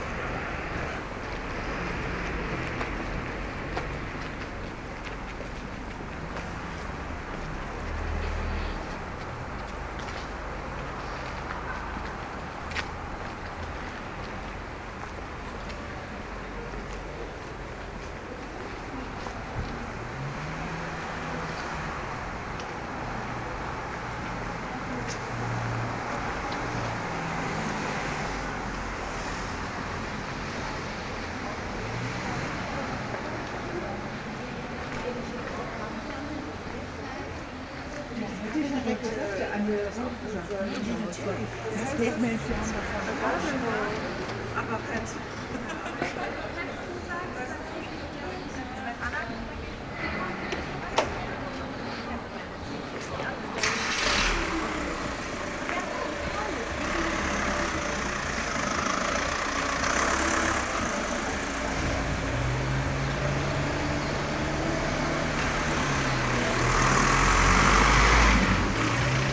Dieffenbachstraße, Berlin, Deutschland - Soundwalk Dieffenbachstrasse
Soundwalk: Along Dieffenbachstrasse
Friday afternoon, sunny (0° - 3° degree)
Entlang der Dieffenbachstrasse
Freitag Nachmittag, sonnig (0° - 3° Grad)
Recorder / Aufnahmegerät: Zoom H2n
Mikrophones: Soundman OKM II Klassik solo
Berlin, Germany, February 2018